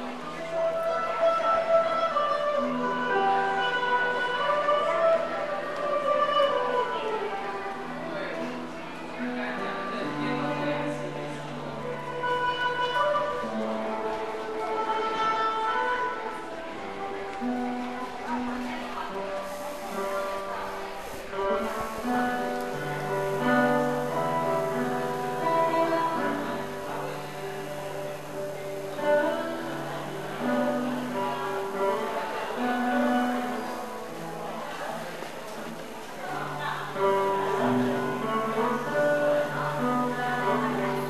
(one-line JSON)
{"title": "Taipei, Near ZhongShan Station, Subway guitarist", "date": "2009-07-11 09:04:00", "latitude": "25.05", "longitude": "121.52", "altitude": "11", "timezone": "Asia/Taipei"}